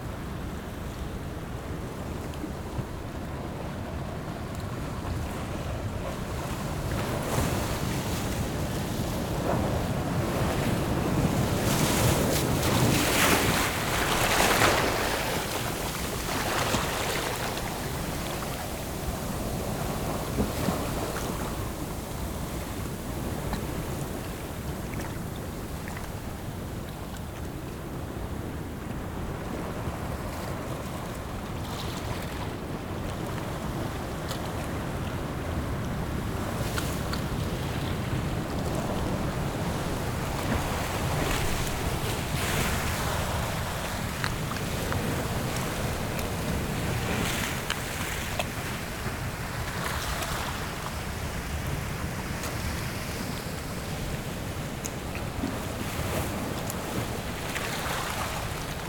三貂角, New Taipei City - Sound of the waves
Sound of the waves
Zoom H6 XY mic+ Rode NT4
New Taipei City, Taiwan, 21 July 2014, ~14:00